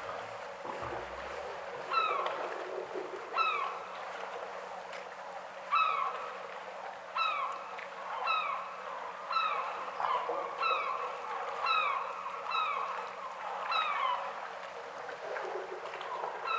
Stamsund, Norway
Recording from the fishing harbour of Stamsund.
Lofoten, Norway, 1 April 2009